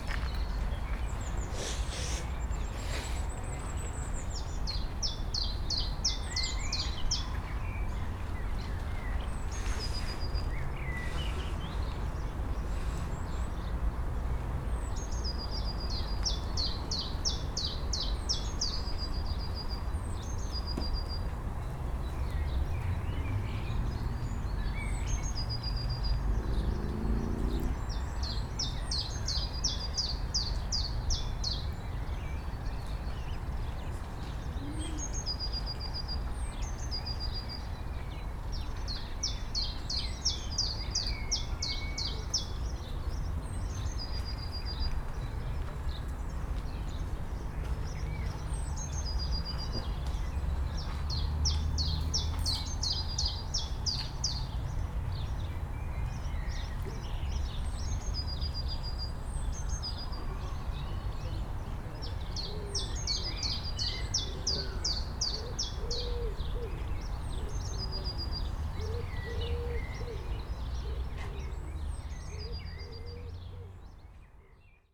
TP Rauenberg - Sunday park ambience
place revisited on a spring Sunday afternoon. Birds, city hum, promenaders. Nothing special happens.
(Sony PCM D50, DPA4060)
19 April, 14:50